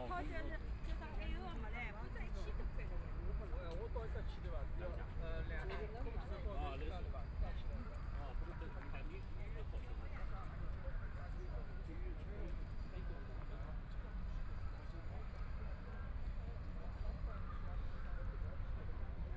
黃興公園, Shanghai - walking in the park
Walking inside the park amusement park, Binaural recording, Zoom H6+ Soundman OKM II ( SoundMap20131122- 5 )
22 November 2013, 17:00